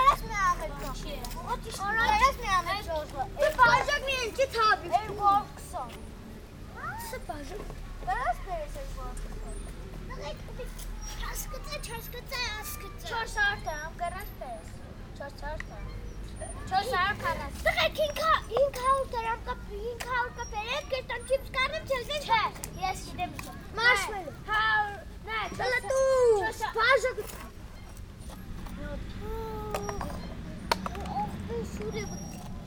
Gyumri, Arménie - Children
While we were eating in a park, some children went and played around us. They were playing football with an old plastic bottle.